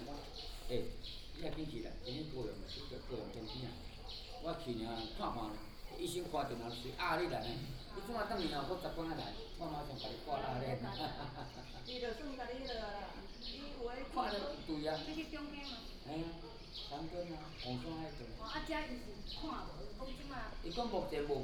太麻里車站, Taimali Township, Taitung County - At the train station platform
At the train station platform, Train arrives at the station, Bird cry, Station Message Broadcast, Chicken roar, A group of seniors chatting
Taimali Township, 站前路2號, 30 March 2018, ~06:00